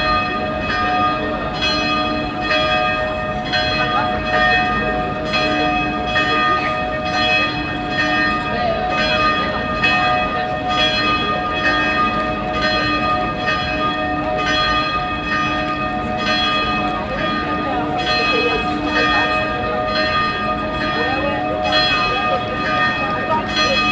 Stadtkern, Essen, Deutschland - essen, evangelian market church, bells
Vor der evangelischen Marktkirche. Der Klang der vier Uhr Glocken an einem Samstag Nachmittag. Am Ende plus die Stunden Glocke der in der Nähe stehenden Dom Kirche. Im Hintergrund Stimmen und Schritte auf dem Marktplatz.
In front of the evangelian market church. The sound of the 4o clock bells - at the end plus the hour bells of the nearby dom church.
26 April 2014, 16:00